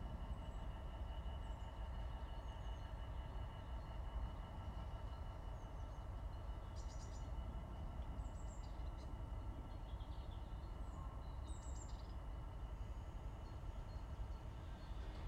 The Kennet and Avon Bridge, Southcote Reading UK - Freight Train Crossing
DR60D Mk11and a pair of Pluggies set XY with foam add-ons.
15 January 2021, ~11:00